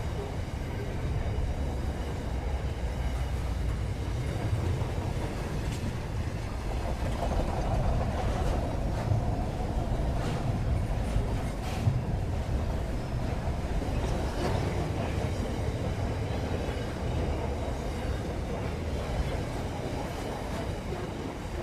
Blakiston Crossing - Blakiston Freight Train
Freight Train from Adelaide, South Australia heading to Melbourne, Victoria. This particular train was about 1,300 metres long.
Recorded with two Schoeps CCM4Lg in ORTF configuration inside a Schoeps/Rycote stereo blimp directly into a Sound Devices 702 recorder.
Recorded at 20:30 on 19 March 2010